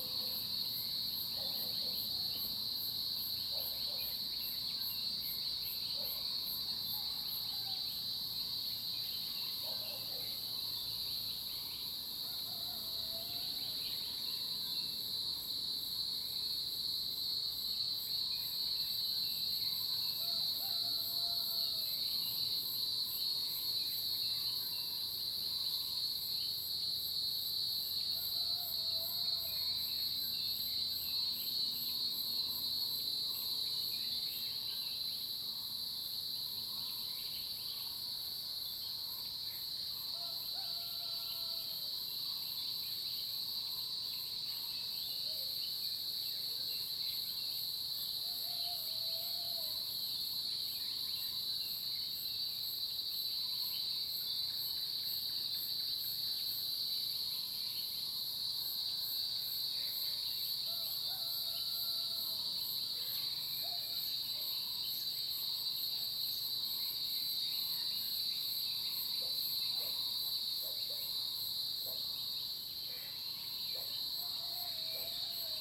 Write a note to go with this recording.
Early morning, Cicada sounds, Frogs chirping, Bird call, Zoom H2n MS+XY